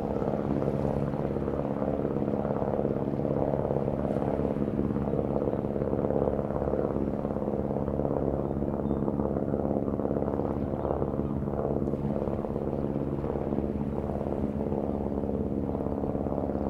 Teignmouth, UK - Teignmouth Beach.
Teignmouth beach at the entrance to the Teign Estuary. Recorded with a Zoom H2N recorder and Rycote windshild. Sounds of the sea, a fishing boat and dogs barking.
16 April 2017